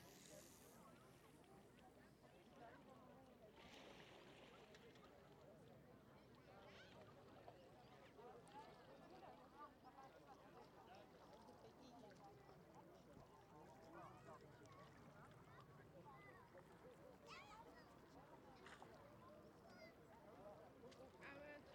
Lac Genin (Oyonnax - Ain)
Dernier week-end avant la rentrée scolaire
Le soleil joue avec les nuages, la température de l'eau est propice aux baignades
la situation topographique du lac (dans une cuvette) induit une lecture très claire du paysage sonore.
ZOOM F3 + Neuman KM184